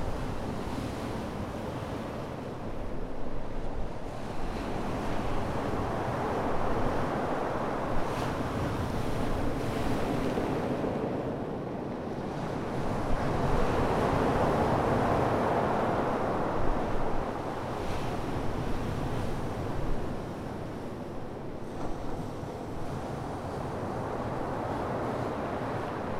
{
  "title": "Chesil Cove 2.30pm 11-05-14",
  "date": "2014-05-11 14:29:00",
  "latitude": "50.56",
  "longitude": "-2.45",
  "altitude": "13",
  "timezone": "Europe/London"
}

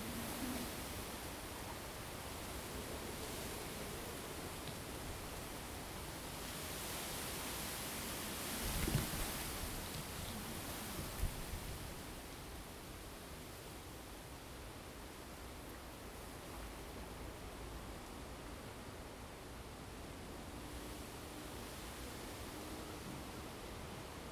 {
  "title": "Ln., Sec., Zhonghua Rd., Xiangshan Dist., Hsinchu City - Wind and bamboo forest",
  "date": "2017-08-30 12:55:00",
  "description": "Wind and bamboo forest, Next to the tracks, The train passes by, Zoom H2n MS+XY",
  "latitude": "24.76",
  "longitude": "120.91",
  "altitude": "8",
  "timezone": "Asia/Taipei"
}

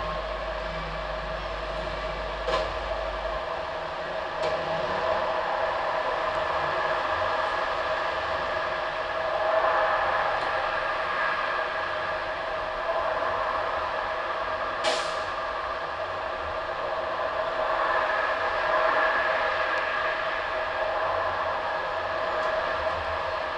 {
  "date": "2011-10-17 08:12:00",
  "description": "Brussels, Tunnel Louise with contact microphones",
  "latitude": "50.84",
  "longitude": "4.35",
  "altitude": "71",
  "timezone": "Europe/Brussels"
}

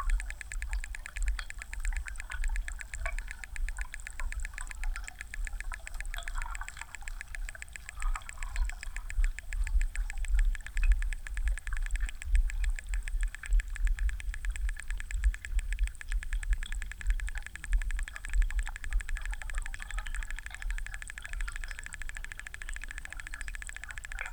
{
  "title": "Kirkilai, Lithuania, karst lake underwater",
  "date": "2015-08-23 15:50:00",
  "description": "hydrophones in the one of karst lakes of Birzai area",
  "latitude": "56.25",
  "longitude": "24.69",
  "altitude": "46",
  "timezone": "Europe/Vilnius"
}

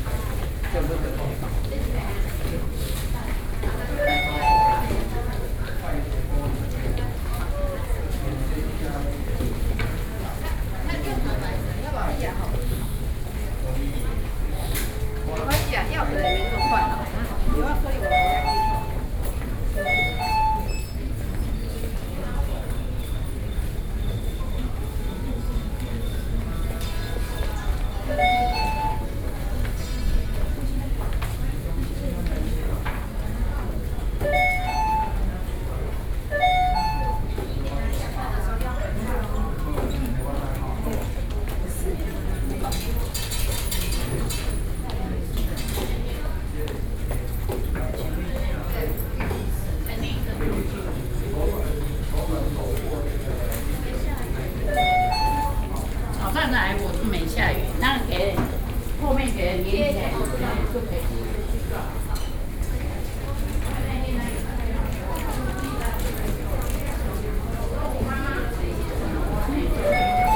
{
  "title": "National Taiwan University Hospital, Taipei City - in the hospital",
  "date": "2012-11-29 12:54:00",
  "description": "Waiting on payment and medicine cabinet stage, (Sound and Taiwan -Taiwan SoundMap project/SoundMap20121129-7), Binaural recordings, Sony PCM D50 + Soundman OKM II",
  "latitude": "25.04",
  "longitude": "121.52",
  "altitude": "14",
  "timezone": "Asia/Taipei"
}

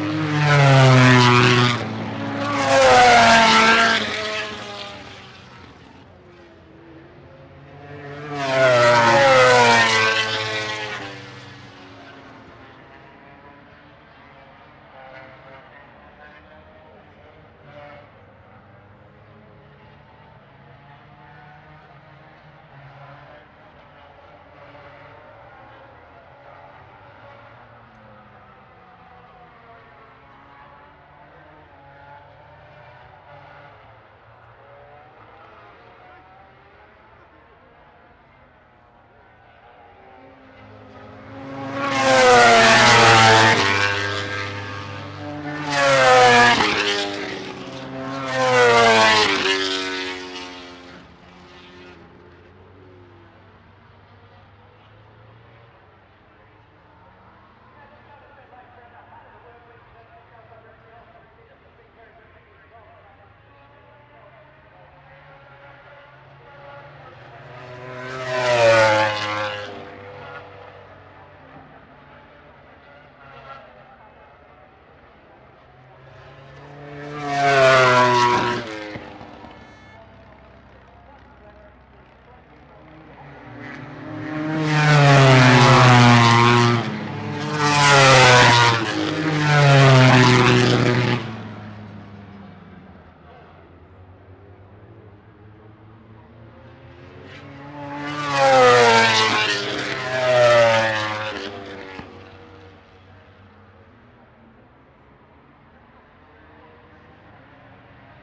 {
  "title": "Unnamed Road, Derby, UK - british motorcycle grand prix 2006 ... motogp qual ...",
  "date": "2006-07-01 14:00:00",
  "description": "british motorcycle grand prix 2006 ... motogp qual ... one point stereo mic to minidisk ... some distant commentary ...",
  "latitude": "52.83",
  "longitude": "-1.37",
  "altitude": "81",
  "timezone": "Europe/London"
}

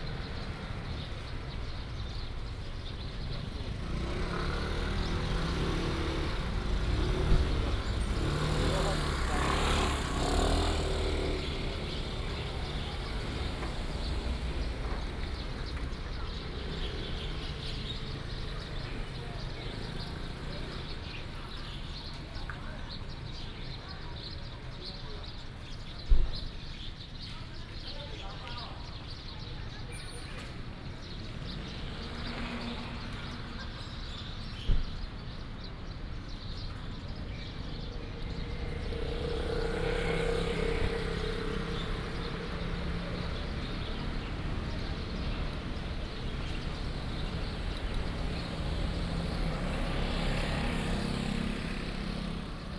{
  "title": "Ershui Station, 二水鄉 - Square in the station",
  "date": "2018-02-15 08:48:00",
  "description": "In the Square in the station, lunar New Year, Traffic sound, Bird sounds\nBinaural recordings, Sony PCM D100+ Soundman OKM II",
  "latitude": "23.81",
  "longitude": "120.62",
  "altitude": "85",
  "timezone": "Asia/Taipei"
}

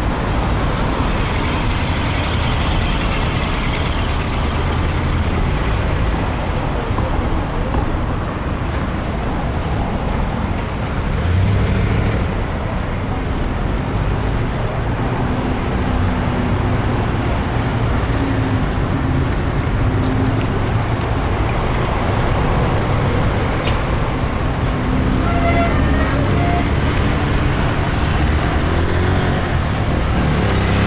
{
  "title": "Ak., Bogotá, Colombia - Crowded Atmosphere - Bogota Street",
  "date": "2021-05-09 18:30:00",
  "description": "You will hear: various types of vehicles, large and small, car, trucks, bicycles, motorcycles, all of these at different speeds, light wind, horn, dog.",
  "latitude": "4.69",
  "longitude": "-74.04",
  "altitude": "2554",
  "timezone": "America/Bogota"
}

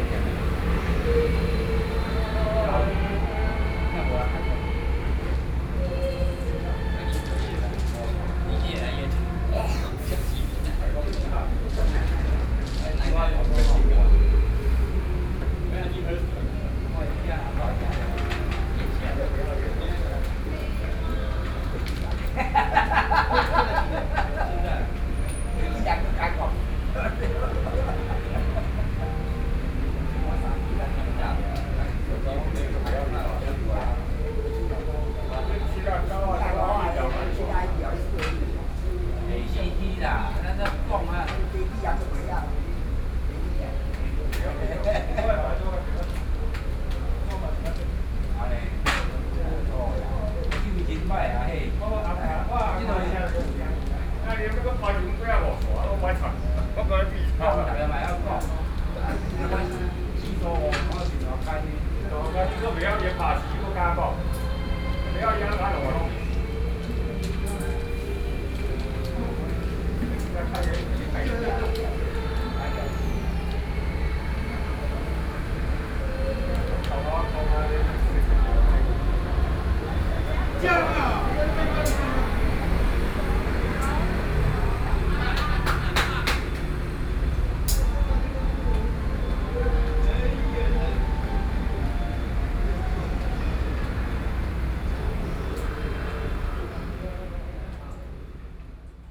{
  "title": "Beitou Park, Taipei City - Night in the park",
  "date": "2013-08-24 20:08:00",
  "description": "Old people playing chess, Behind the traffic noise, Sony PCM D50 + Soundman OKM II",
  "latitude": "25.14",
  "longitude": "121.51",
  "altitude": "24",
  "timezone": "Asia/Taipei"
}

